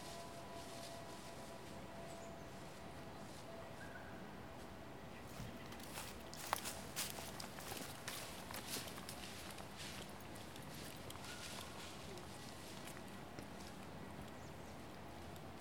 Lake Roland, Towson, Maryland, US - walking in the park
Baltimore, MD, USA, November 2016